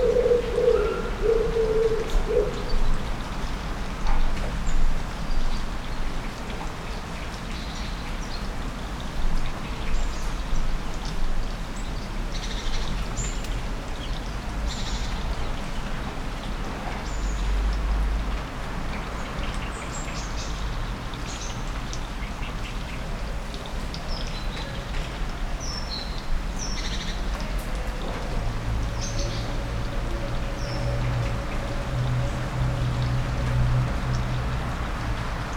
Brussels, Avenue Molière, light rain, birds and a plane
Its sometimes even more beautiful when nothing happens..
PCM-D50, SD-MixPre, Rode NT4.
Forest, Belgium, 2 August